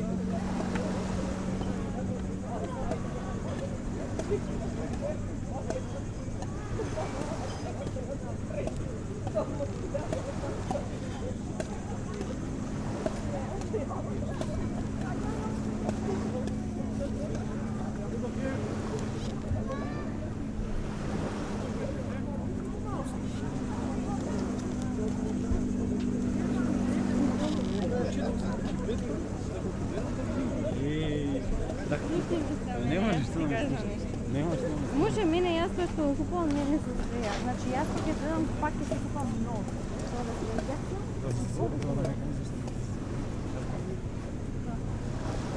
Beach sounds Nydri, Lefkada, Greece.